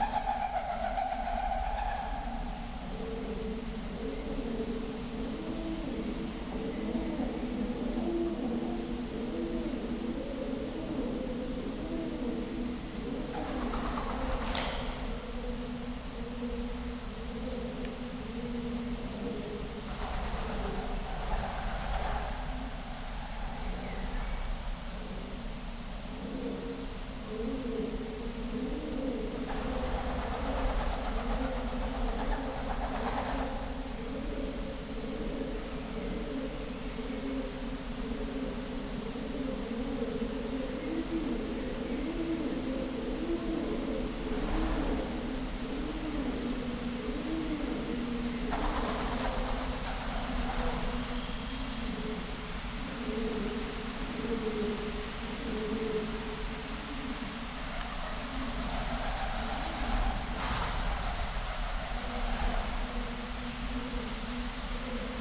Unnamed Road, Bremen, Germany - Valentin bunker, birds
The Valentin bunker in Bremen never got up and running in time during the war. Despite being heavily bombed, its brutal structure still remains; a chilling account of the horrors, forced labour and the crazed megalomania of the war. The bunker has become a habitat for birds, pigeons and swallows that nest and fly through this vast space.
Deutschland, 12 May 2020, 15:10